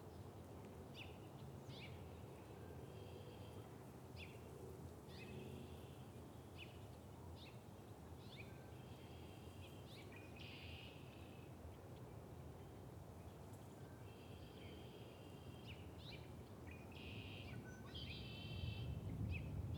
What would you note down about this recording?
Sounds of the backyard on a spring day